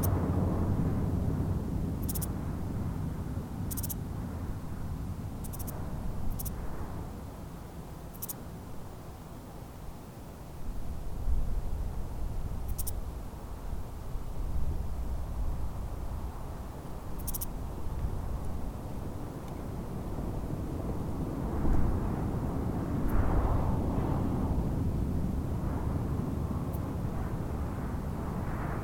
Metabolic Studio Sonic Division Archives:
Owens Lake Ambience. Sounds of low flying aircraft, insects and traffic from Highway 395. Recorded on Zoom H4N
14 September 2014, 13:00